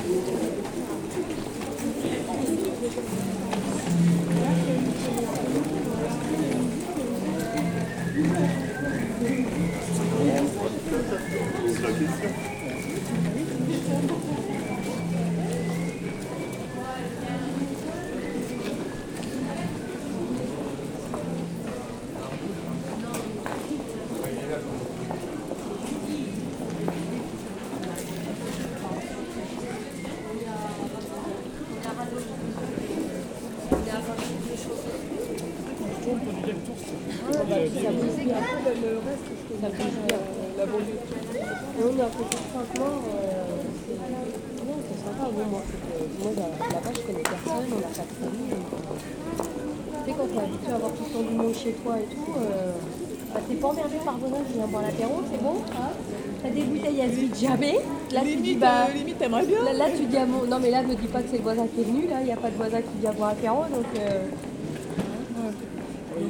Chartres, France - Street ambiance
Rue Noël Ballay - This artery is one of the main shopping streets of the city. Walkers go quietly, sometimes with rather amused discussions. A tramp and his dog wait in front of an establishment. Everyone knows the guy, this causes him plays nothing and chats a lot. But on the other hand, as soon as he begins to play the guitar, and to... whistle (we will describe the sound like that), we quickly run away !
December 2018